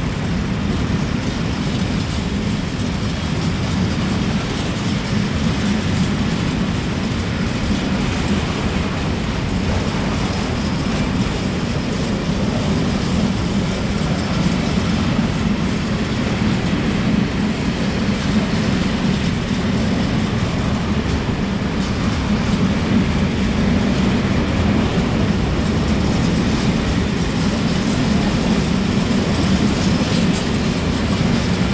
Rijeka, Croatia, Railway Station, Composition - Cargo train